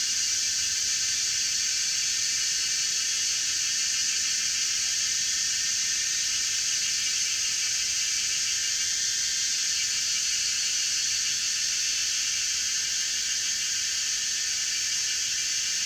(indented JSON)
{
  "title": "水上, 桃米里 Nantou County - In the woods",
  "date": "2016-06-07 18:41:00",
  "description": "Cicadas cry, In the woods\nZoom H2n MS+XY",
  "latitude": "23.94",
  "longitude": "120.91",
  "altitude": "628",
  "timezone": "Asia/Taipei"
}